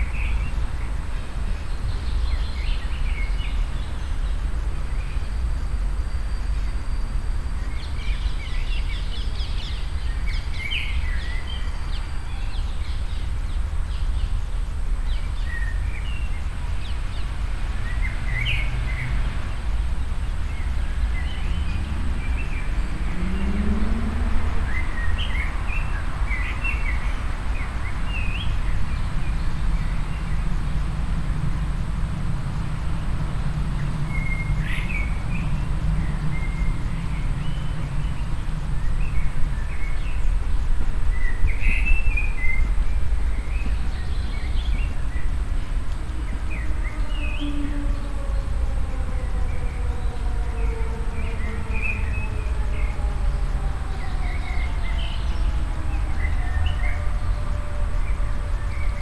stereofeldaufnahmen im september 07 mittags
project: klang raum garten/ sound in public spaces - in & outdoor nearfield recordings